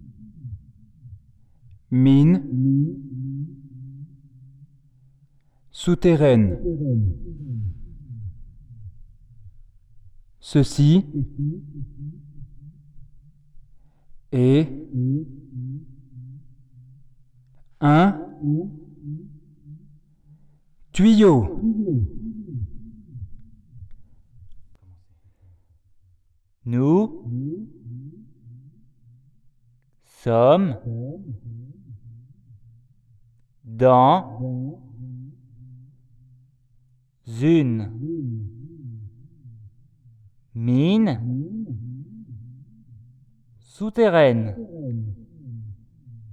{"title": "Montdardier, France - Ghost pipe", "date": "2016-05-03 17:40:00", "description": "In an underground lead mine, we found a 200 meters long pipe. It was fun to speak inside as everything is immediatly becoming completely saturate and echo is loud. My friend and I, say : we are in an underground mine, this is a pipe. pipe ipe pe pe p p p ...", "latitude": "43.92", "longitude": "3.60", "altitude": "880", "timezone": "Europe/Paris"}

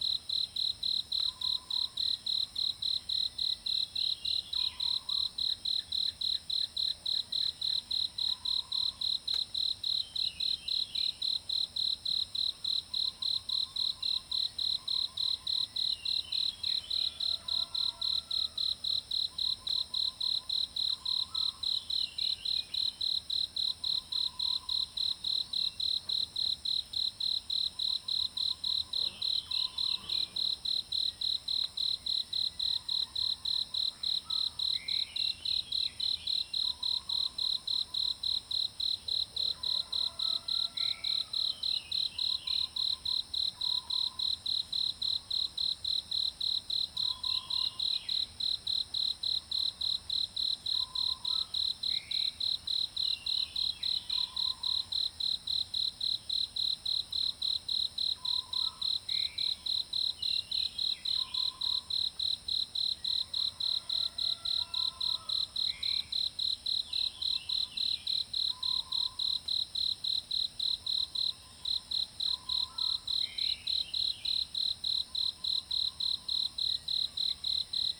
{"title": "Shuishang Ln., 桃米里 - In the bush", "date": "2016-06-08 04:57:00", "description": "Early morning, Bird sounds, Insect sounds, In the bush\nZoom H2n MS+XY", "latitude": "23.94", "longitude": "120.92", "altitude": "480", "timezone": "Asia/Taipei"}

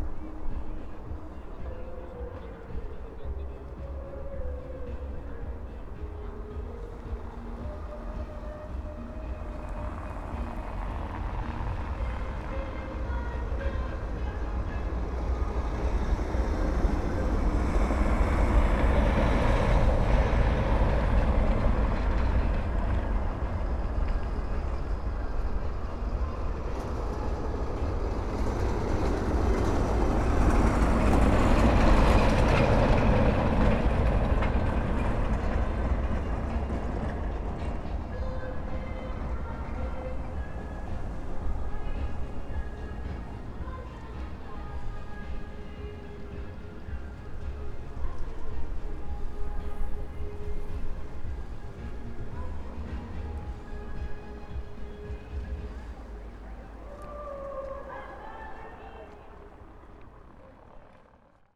{"title": "Lithuania, Zarasai, evening city's ambience", "date": "2012-12-15 16:50:00", "description": "windy evening, street traffic, some concert at the christmas tree in the distance", "latitude": "55.73", "longitude": "26.25", "altitude": "157", "timezone": "Europe/Vilnius"}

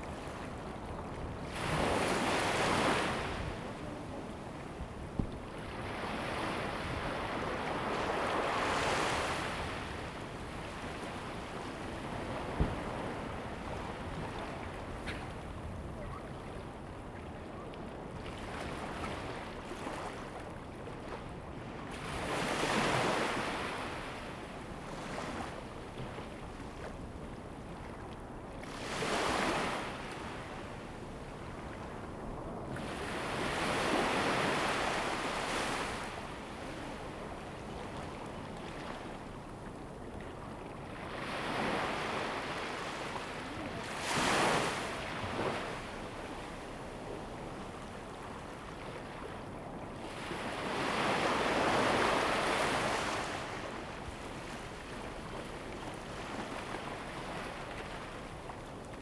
{"title": "烏石鼻漁港, Taiwan - Small fishing port", "date": "2014-09-08 15:02:00", "description": "Thunder and waves, Sound of the waves, Small fishing port, Tourists\nZoom H2n MS+XY", "latitude": "23.23", "longitude": "121.42", "altitude": "7", "timezone": "Asia/Taipei"}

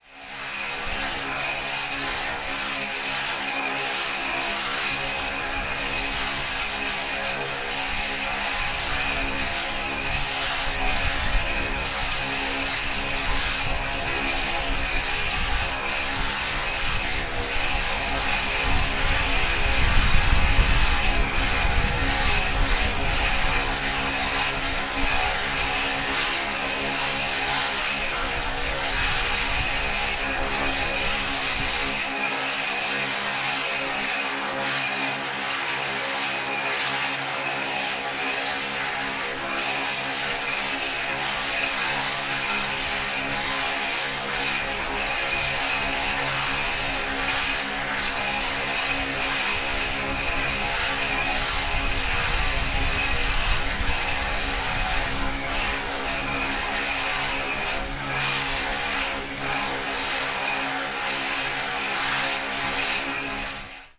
{"title": "Madeira, levada towards Santo Antonio da Serra - water gush in a pipe", "date": "2015-05-06 17:06:00", "description": "same building with intense water gush flowing in it but this time recorded by placing the recorder in a pipe that was built in the wall of the building.", "latitude": "32.69", "longitude": "-16.83", "altitude": "597", "timezone": "Atlantic/Madeira"}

